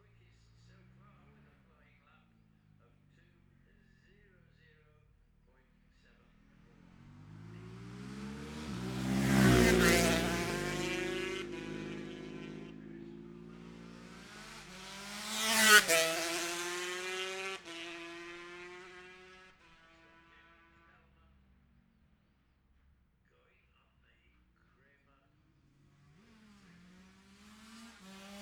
the steve henshaw gold cup 2022 ... lightweight practice ... dpa 4060s clipped to bag to zoom h5 ...
Scarborough, UK, 16 September 2022